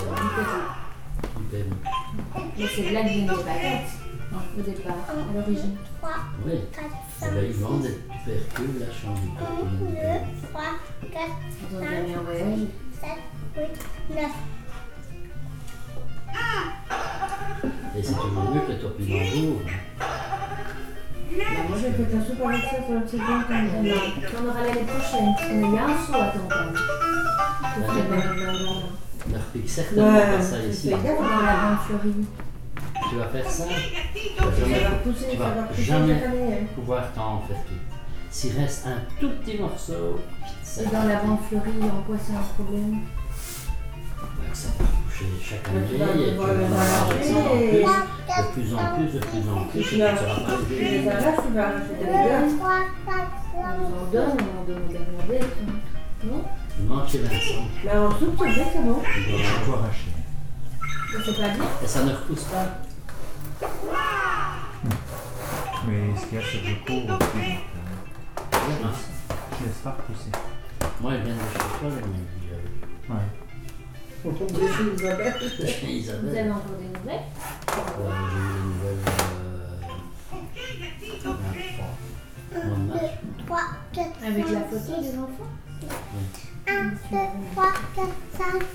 Court-St.-Étienne, Belgique - Family life
A classical family life in Belgium. In a peaceful way of life, people discuss and young children plays.